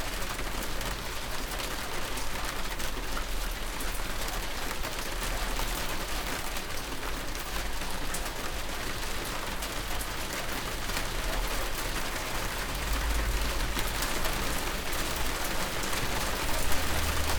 A small storm is falling on an outhouse. It's not long, but in a few time there's a lot of rain. Drops clatter on a big plastic pane.
Pierres, France, 10 August 2017